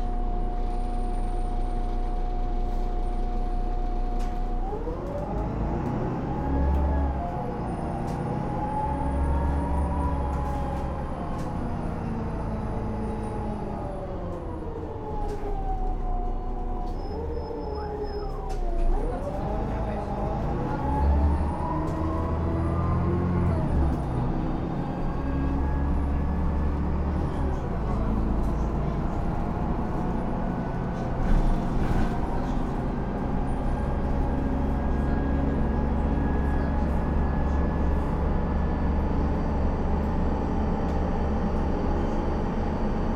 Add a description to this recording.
waiting for the bus to depart + ride 3 stops.